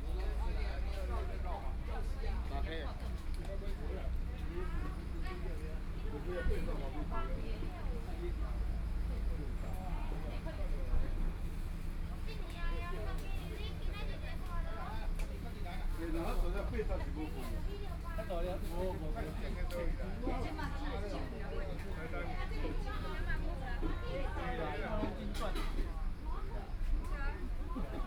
大埤路, 冬山鄉得安村 - Chat
Chat, Tourist, Tourist Scenic Area, Traffic Sound
Sony PCM D50+ Soundman OKM II
Dongshan Township, Yilan County, Taiwan, July 2014